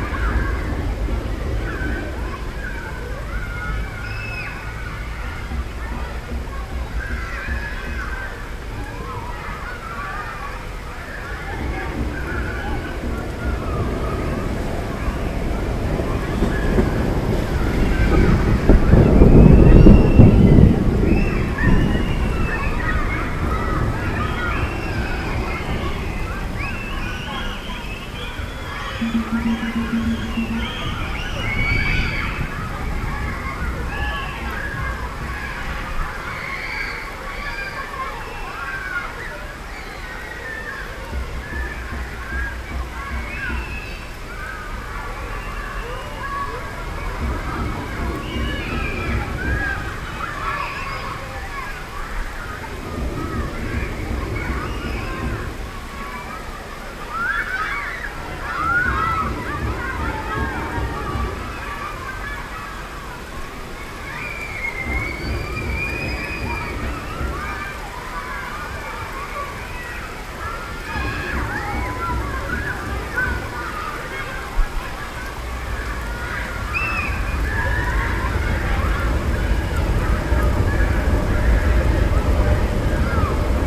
Poplar, London, UK - Summer storm, urban scape
Recording captured in the Summer of 2016. Thunderstorm, rain, a nearby children's playground and and overground rail line.